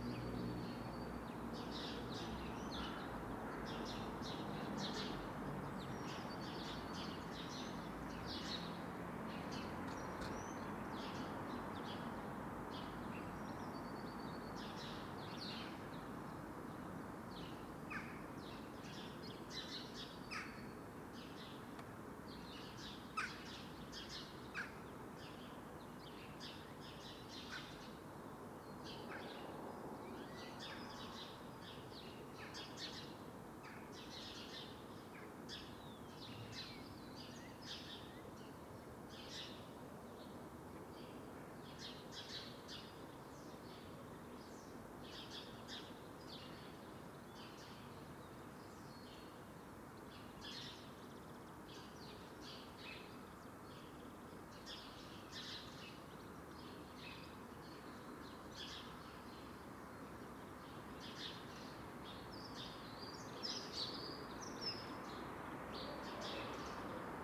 Contención Island Day 55 outer northeast - Walking to the sounds of Contención Island Day 55 Sunday February 28th
The Poplars Roseworth Avenue The Grove Moor Road North St Nicholas Avenue Rectory Grove Church Road Church Lane
Sparrow chatter
across small front gardens
behind low walls
Cars parked
on the south side of the lane
a lone walker passes by
Lost mortar below roof tiles
a sparrow flies to the hole